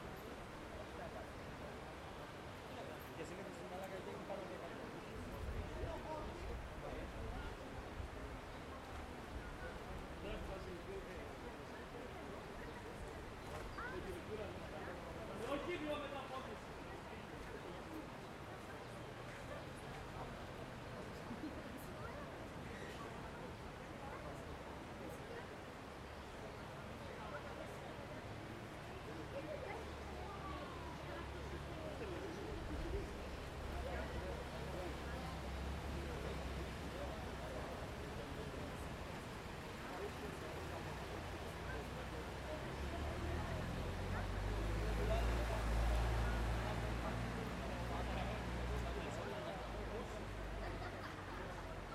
Τα Παπάκια, Πινδάρου, Ξάνθη, Ελλάδα - Park Nisaki/ Πάρκο Νησάκι- 14:00
River flow, people discussing in groups, people passing by.
Περιφερειακή Ενότητα Ξάνθης, Περιφέρεια Ανατολικής Μακεδονίας και Θράκης, Αποκεντρωμένη Διοίκηση Μακεδονίας - Θράκης, 12 May 2020, 14:00